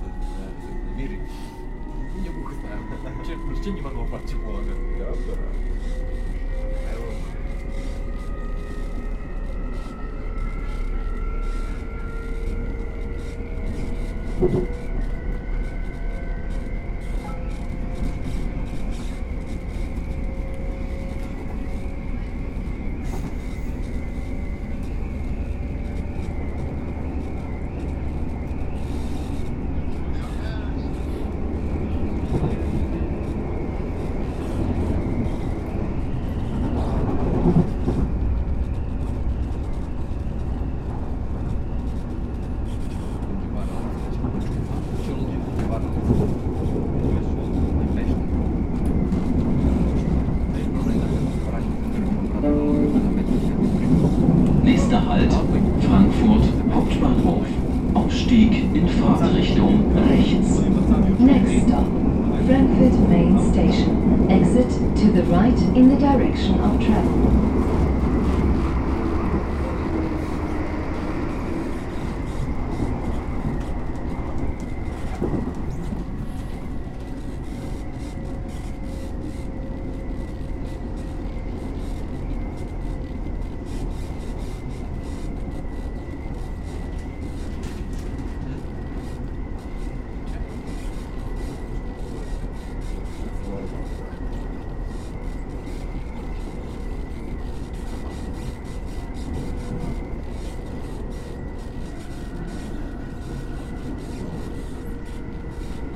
On the ride back to the main station there are no anouncements of the stations made. I never experienced that. Perhaps there were not enough people entering and leaving the S-Bahn. Only the main station is anounced. Leaving to the main station, walking through the large hall that leads to the tracks, walking to the escelator...